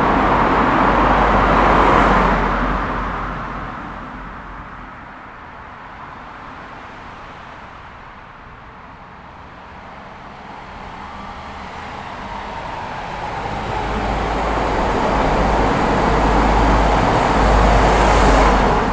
Exeter, UK - Underpass beneath A38 near Exeter Racecourse
This recording was made using a Zoom H4N. The recorder was positioned under the A38 so echoing traffic can be heard as well as the traffic above. This is one of the crossing points of Devon Wildland- with this level of noise would wildlife use this underpass to cross?...This recording is part of a series of recordings that will be taken across the landscape, Devon Wildland, to highlight the soundscape that wildlife experience and highlight any potential soundscape barriers that may effect connectivity for wildlife.